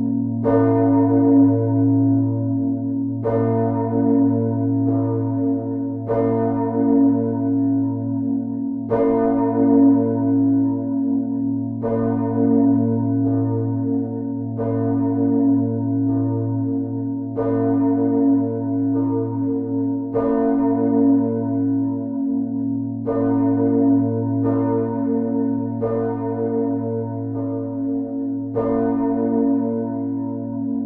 Bruges, Belgique - Brugge bells
The Brugge bells in the Onze-Lieve-Vrouwkerk. Recorded inside the tower with Tim Martens and Thierry Pauwels.
This is the solo of the biggest bell.